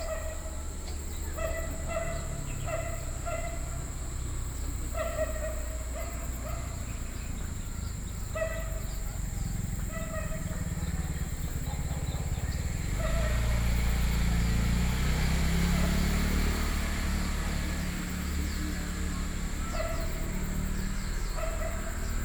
金龍湖, Xizhi Dist., New Taipei City - Dogs barking
Early in the morning, At the lake, Dogs barking
Binaural recordings, Sony PCM D50
16 July 2012, 05:53